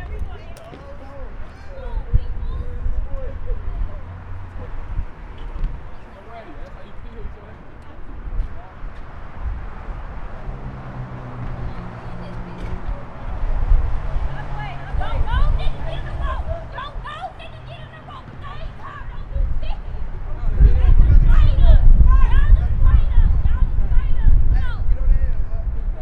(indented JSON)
{
  "title": "Sullivan House Alternative High School, Southside",
  "description": "Sullivan House Alternative School, Field, Basketball, Hopscotch, High School, Kids, Playing, South Side, Chicago",
  "latitude": "41.74",
  "longitude": "-87.57",
  "altitude": "182",
  "timezone": "Europe/Berlin"
}